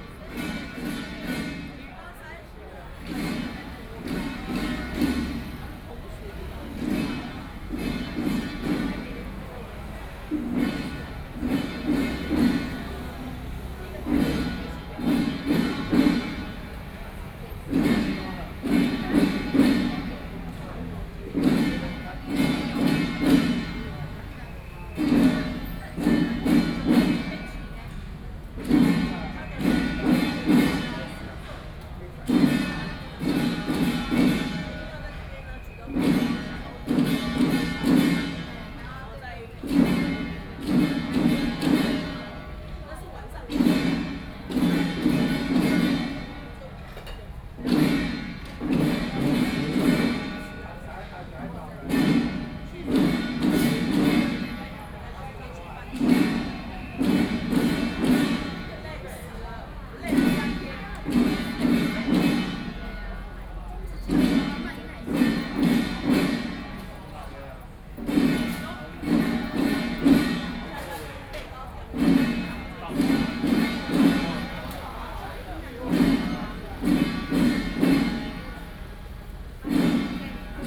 Hanzhong St., Wanhua Dist., Taipei City - Traditional temple festivals
Firework, Traditional temple festivals, Traditional musical instruments, Binaural recordings, Sony PCM D50 + Soundman OKM II, ( Sound and Taiwan - Taiwan SoundMap project / SoundMap20121115-12 )
Wanhua District, Taipei City, Taiwan